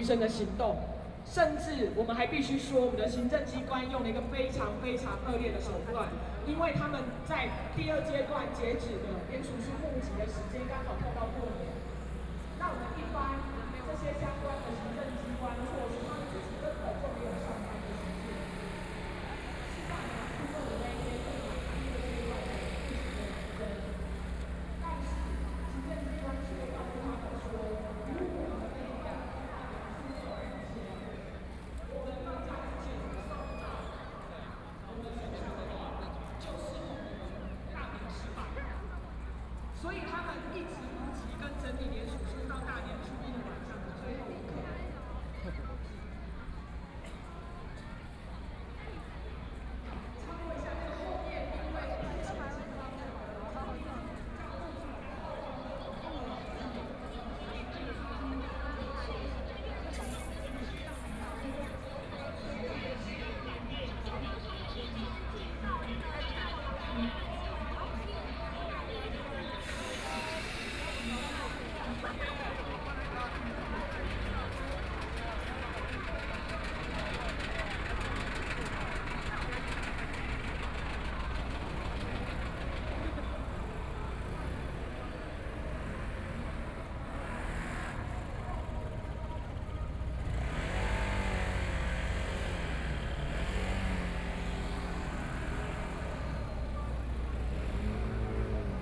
Jinan Rd., Zhongzheng Dist. - Student activism
Walking through the site in protest, People and students occupied the Legislature Yuan